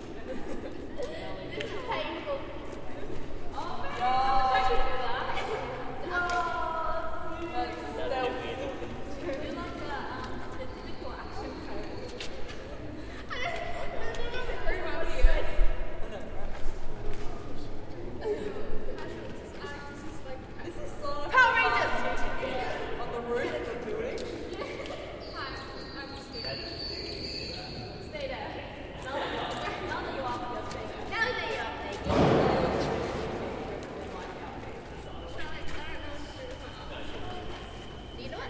Kilkenny, South Australia - Video and Photo Shoot in a Derelict Factory
Recorded during a photo and music video shoot in a derelict factory. Large enclosed space with lots of gravel and dust and pigeon poop on the ground (and dead pigeons). You can hear the pigeons cooing and flying around in the rafters if you listen carefully.
28 October